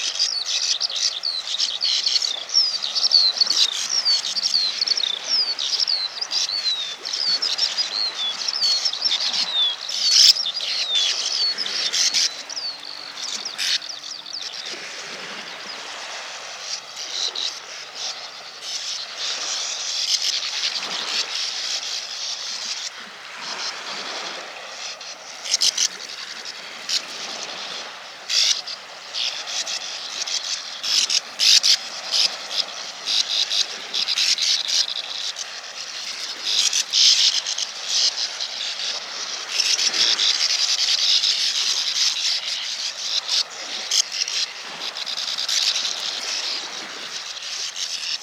Baltic Sea, Nordstrand Dranske, Rügen - Bank swallows full on
A swallows breeding colony in the sandy cliffs at the wild northern beach
Olympus LS11, AB_50 stereo setup with a pair of pluginpowered PUI-5024 diy mics
30 May 2021, 19:20